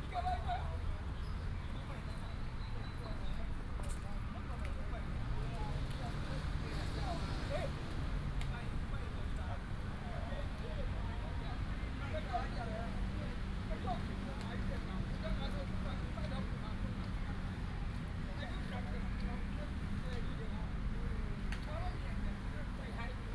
新勢公園, Pingzhen Dist., Taoyuan City - in the Park
in the Park, Old man, Traffic sound